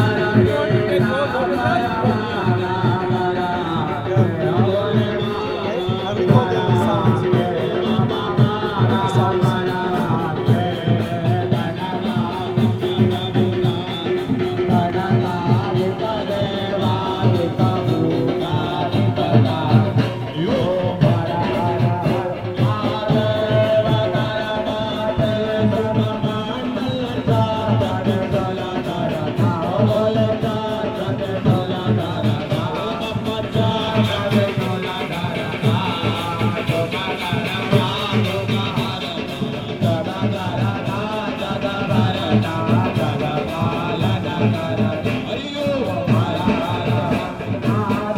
Omkareshwar, Madhya Pradesh, Inde - A Durga puja song
During the festival of Durga, Hindus gather every evening to celebrate the Goddess.
Madhya Pradesh, India